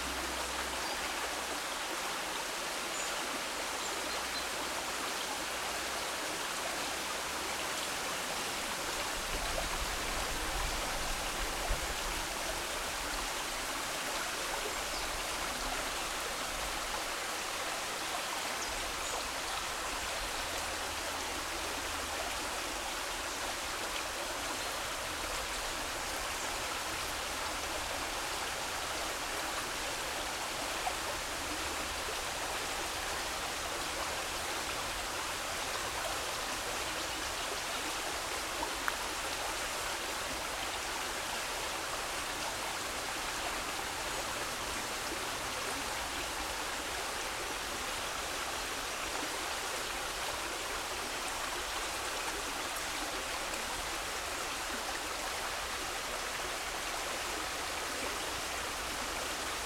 R. Principal, Portugal - Agroal fluvial beach soundscape
Small creek, water running, birds, nature soundscape. Recorded with a pair of DIY primo 172 capsules in a AB stereo configuration into a SD mixpre6.